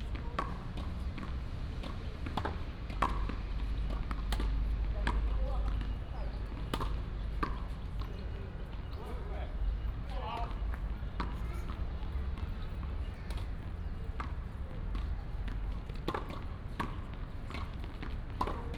Qingnian Park, Taipei City - in the Tennis driving range
in the Park, in the Tennis driving range, traffic sound